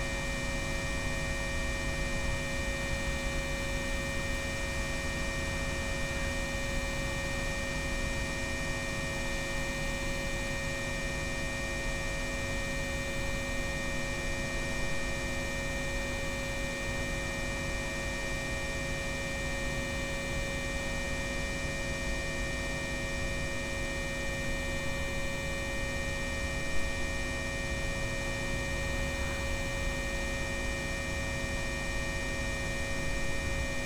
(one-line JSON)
{"title": "Utenos sen., Lithuania, electric meadow", "date": "2016-06-21 14:30:00", "description": "4 tracks recording in the meadow under two high voltage lines. recorded in windy day with small microphones hidden in grass and electronic listening device Electrosluch 3.", "latitude": "55.49", "longitude": "25.67", "altitude": "153", "timezone": "Europe/Vilnius"}